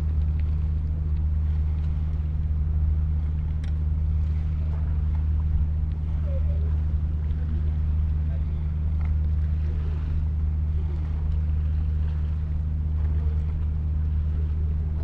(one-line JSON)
{"title": "Corso Camillo Benso Conte di Cavour, Trieste, Italy - evening cricket", "date": "2013-09-07 19:58:00", "latitude": "45.65", "longitude": "13.77", "altitude": "29", "timezone": "Europe/Rome"}